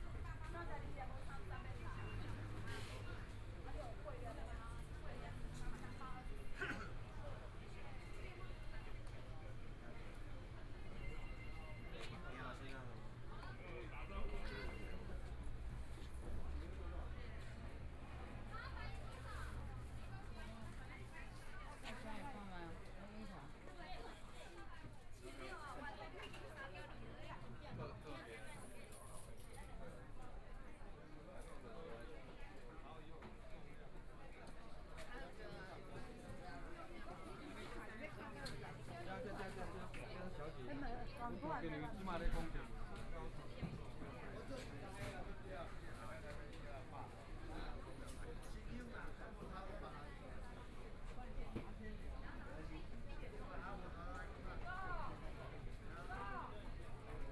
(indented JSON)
{
  "title": "Taipei Agricultural Products Market, Zhongshan District - the fruit and vegetable market",
  "date": "2014-01-20 14:46:00",
  "description": "Walking through the fruit and vegetable market, Binaural recordings, Zoom H4n+ Soundman OKM II",
  "latitude": "25.07",
  "longitude": "121.54",
  "timezone": "Asia/Taipei"
}